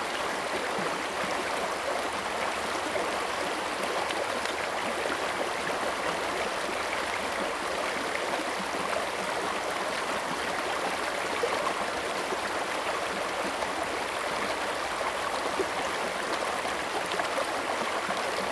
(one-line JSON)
{"title": "中路坑溪, 埔里鎮桃米里 - Flow", "date": "2015-08-26 16:32:00", "description": "Bird calls, Brook, Flow\nZoom H2n MS+XY", "latitude": "23.94", "longitude": "120.92", "altitude": "492", "timezone": "Asia/Taipei"}